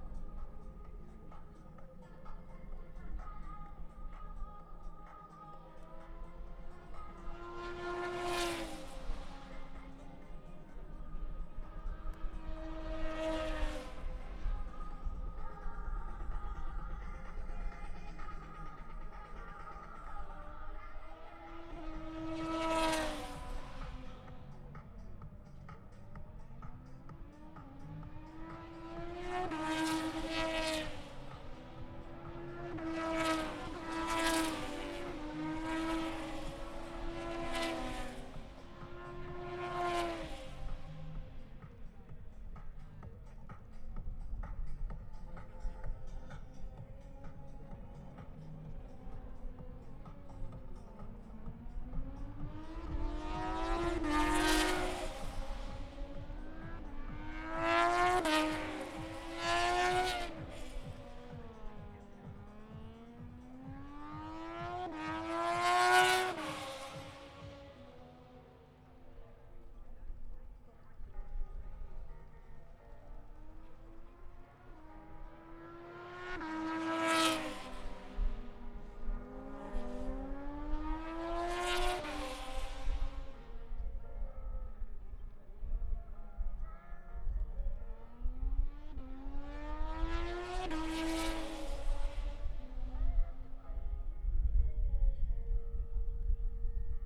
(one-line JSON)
{"title": "Towcester, UK - british motorcycle grand prix 2022 ... moto two ...", "date": "2022-08-06 10:55:00", "description": "british motorcycle grand prix 2022 ... moto two free practice three ... zoom h4n pro integral mics ... on mini tripod ... plus disco ...", "latitude": "52.08", "longitude": "-1.02", "altitude": "158", "timezone": "Europe/London"}